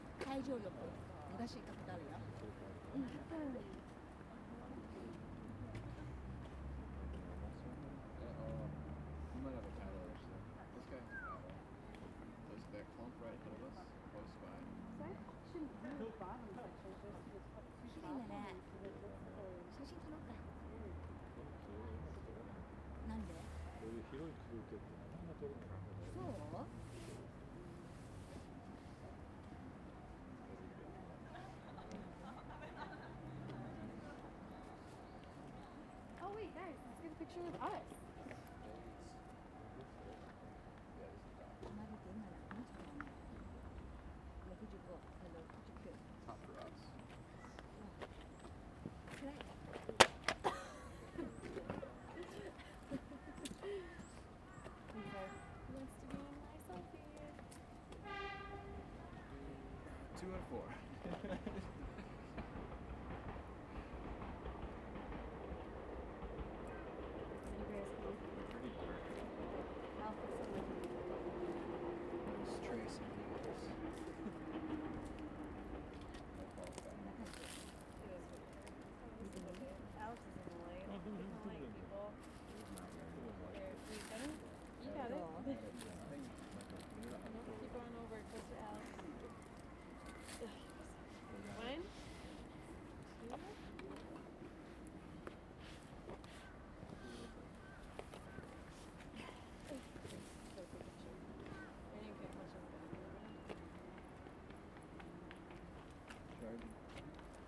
Hase, Kamakura-shi, Kanagawa-ken, Japonia - Kamakura view
Recorded at a viewing spot at Hasedera. You can hear tourists, nearby sea, ad a local train, running below. Recorded with Zoom H2n.
Kanagawa-ken, Japan